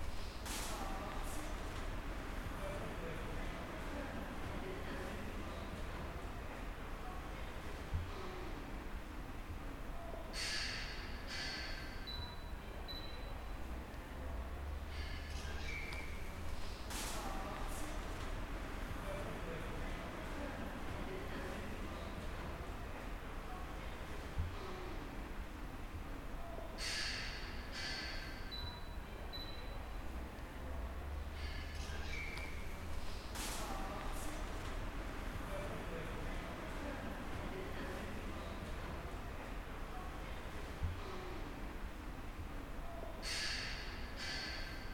{"title": "Chaussée de Ninove 3028 - Hall halt", "date": "2016-10-22 15:30:00", "description": "The hall is a quiet zone between two tougher urban traffic soundscapes, the station and the street intersection. Metallic sounds can be heard, and one can hear sound reverberation on glass windows. A dimmed street soud environnement is in the back with a few conversations on top. A bip sound is the only precise repetitive point of reference.", "latitude": "50.85", "longitude": "4.32", "altitude": "36", "timezone": "Europe/Brussels"}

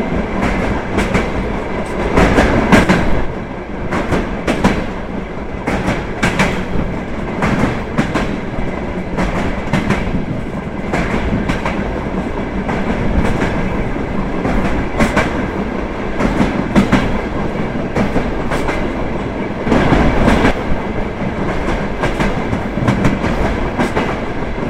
{"title": "Bahn Kutaissi Tblissi", "date": "2010-09-07 17:39:00", "description": "Tunnel, Georgische Eisenbahn, Passstraße", "latitude": "41.94", "longitude": "44.33", "altitude": "530", "timezone": "Asia/Tbilisi"}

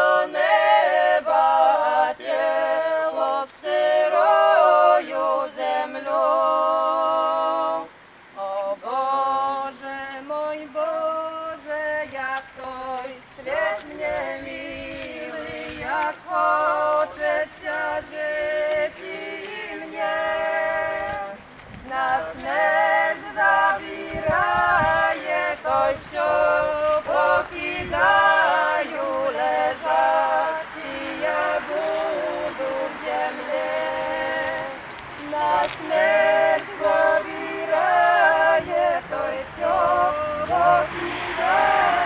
{"title": "Konstantego Kalinowskiego, Białystok, Poland - Wschód Kultury - Inny Wymiar 2018 cz.2", "date": "2018-08-30 16:38:00", "latitude": "53.13", "longitude": "23.15", "altitude": "152", "timezone": "GMT+1"}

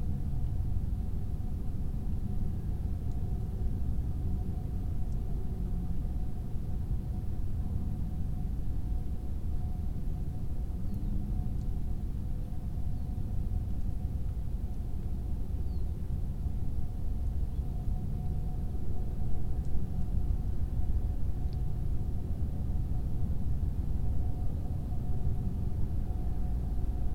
{"title": "Pačkėnai, Lithuania, in electrical pole", "date": "2020-02-18 14:05:00", "description": "two concrete electrical poles lying on a meadow. they are pipe-like, so I have inserted microphones in one pole. to listen a hum of distant traffic...", "latitude": "55.44", "longitude": "25.58", "altitude": "125", "timezone": "Europe/Vilnius"}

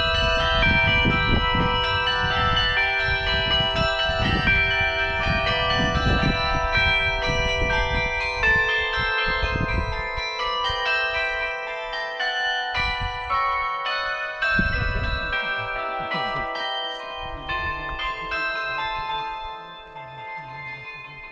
{"title": "Maison du Peuplement des Hauts, Cilaos, Réunion - 20210913-église-bella-ciao", "date": "2021-09-13 12:19:00", "latitude": "-21.13", "longitude": "55.47", "altitude": "1218", "timezone": "Indian/Reunion"}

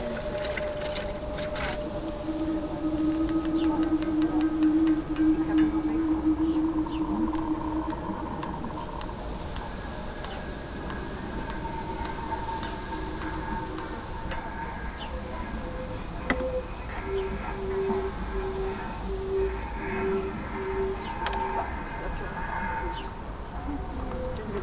{"title": "kunstGarten, graz, austria - gardening tools", "date": "2013-02-08 20:15:00", "latitude": "47.05", "longitude": "15.42", "timezone": "Europe/Vienna"}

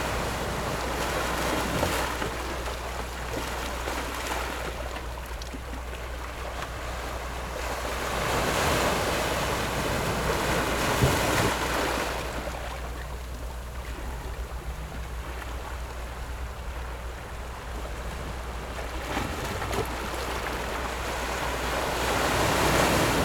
{"title": "頭城鎮龜山里, Yilan County - Rocks and waves", "date": "2014-07-29 16:28:00", "description": "Sitting on the rocks, Rocks and waves, Sound of the waves, Very hot weather, There are boats on the distant sea\nZoom H6+ Rode NT4", "latitude": "24.94", "longitude": "121.89", "timezone": "Asia/Taipei"}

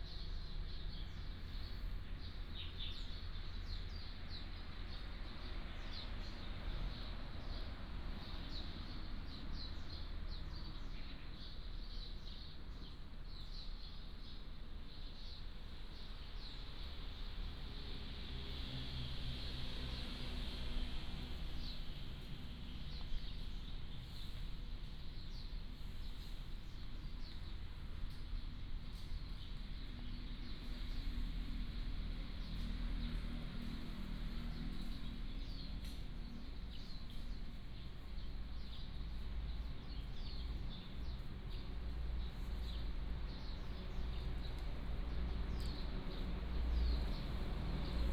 {"title": "林投村, Penghu County - In front of the temple", "date": "2014-10-21 09:35:00", "description": "In front of the temple tree, Traffic Sound, Birds singing", "latitude": "23.56", "longitude": "119.64", "altitude": "11", "timezone": "Asia/Taipei"}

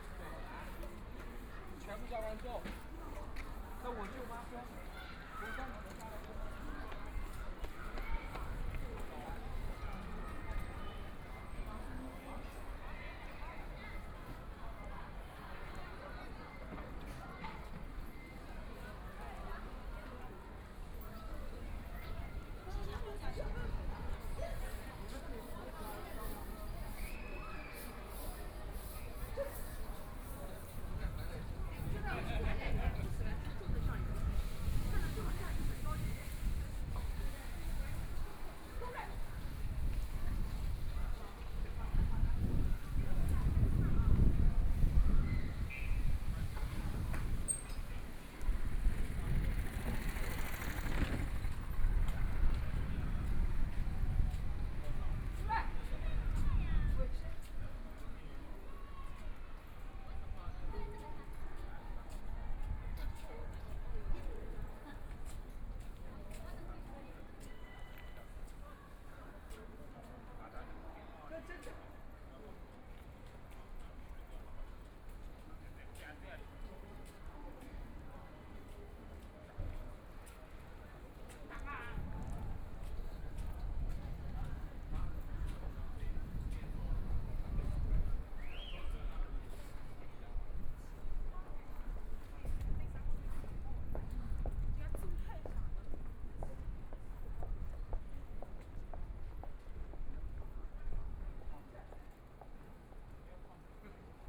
{"title": "Pudong New Area, China - soundwalk", "date": "2013-11-21 12:05:00", "description": "Away from the main road into the community of small streets, Walk through the school next\nCommunities, small market, Binaural recording, Zoom H6+ Soundman OKM II", "latitude": "31.23", "longitude": "121.52", "altitude": "18", "timezone": "Asia/Shanghai"}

